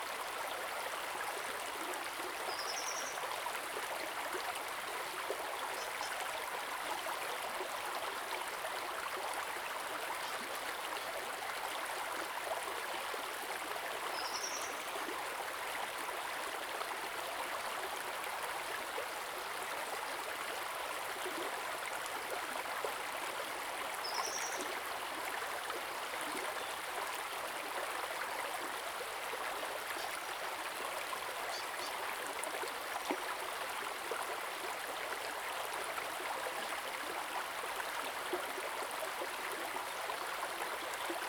成功里, 埔里鎮, Nantou County - Upstream
stream, Upstream
Zoom H2n MS+XY
Nantou County, Taiwan, 20 April 2016